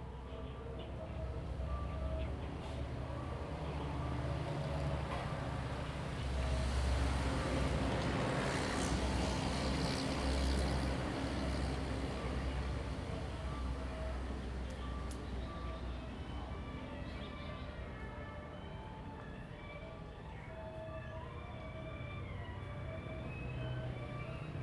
大福村, Hsiao Liouciou Island - In the temple square
In the temple square, Birds singing, Traffic Sound
Zoom H2n MS +XY
Liuqiu Township, Pingtung County, Taiwan